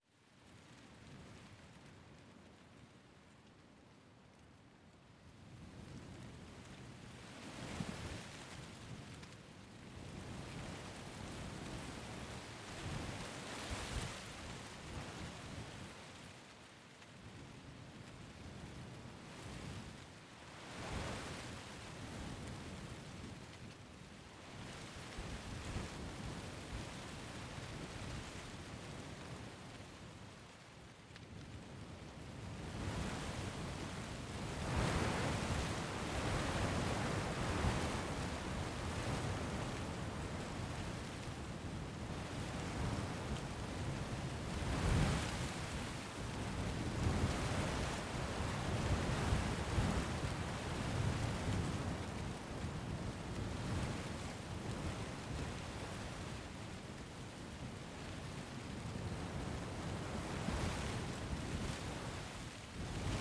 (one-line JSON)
{"title": "JQGM+RP Filotas, Greece - Leaves", "date": "2021-12-27 16:25:00", "description": "record by: Alexandros Hadjitimotheou", "latitude": "40.63", "longitude": "21.78", "altitude": "851", "timezone": "Europe/Athens"}